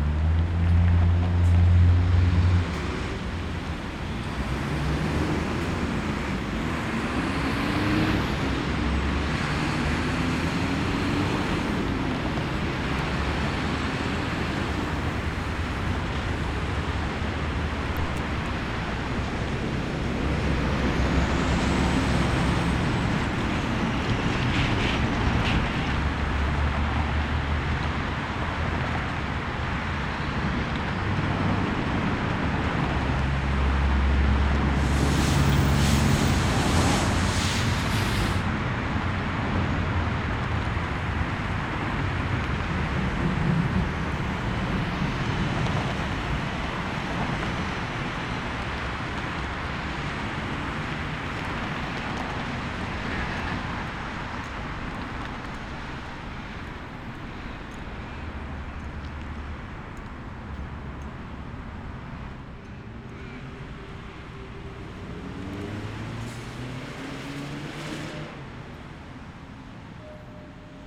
Corner of Alexandra Parade and Nicholson St, Carlton - Part 2 of peculiar places exhibition by Urban Initiatives; landscape architects and urban design consultants
landscape architecture, urban initiatives pty ltd, urban design, peculiar places